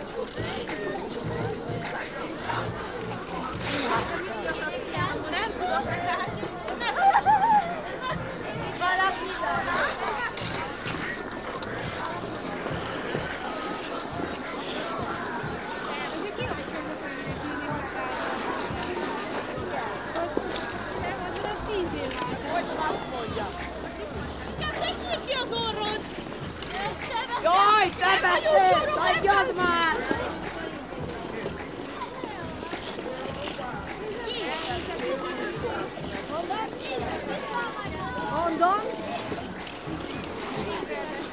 Lónyaytelep, Budapest, Magyarország - Skaters
Ice-skaters on a temporary outdoor ice-rink set up for the holiday season.
Piac tér, Hungary